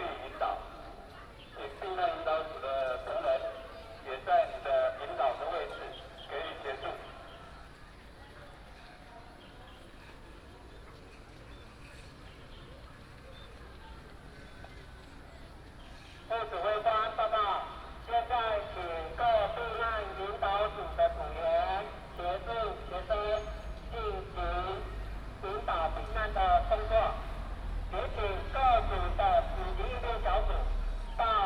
三灣國小, Sanwan Township - In the square of the temple
School for earthquake drills, Bird call, Traffic sound, Binaural recordings, Sony PCM D100+ Soundman OKM II
Miaoli County, Taiwan, 15 September 2017